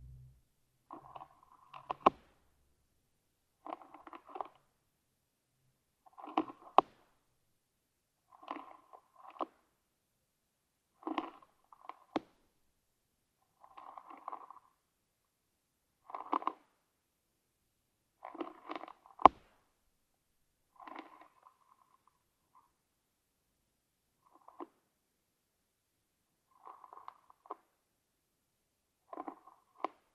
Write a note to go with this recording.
In the all-animals-eating collection, this recording is about a slug eating a spinach leave. I was wishing to do this on the same time of the snail recording, but this brat didn’t want to eat anything !! So, I put it in a pot during 24 hours, in a dry place, and I famished it. After this time of latency, strategy was to put it on a wet young spinach leave, as I know slug adore this kind of vegetables. I put two contact microphones below the leave, fixed on toothpicks. Slug immediately eat this banquet, making big holes in spinach. The sound of a slug eating is clearly more flabby than a snail, but it remains quite interesting.